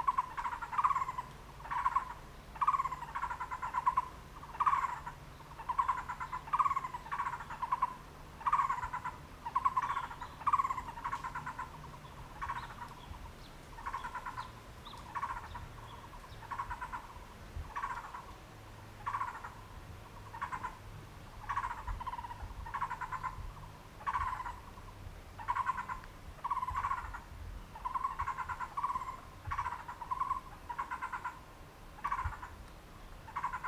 小粗坑路, Xiaocukeng, Pinglin Dist. - Birdsong
Birdsong
Zoom H4n + Rode NT4